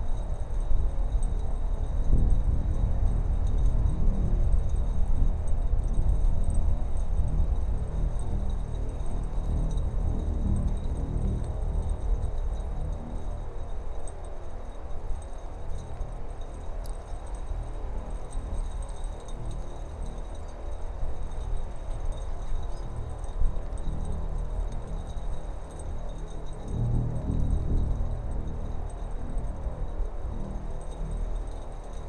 Utena, Lithuania, in the tubes
two metallic tubes found. two small omni mics. wind and snow.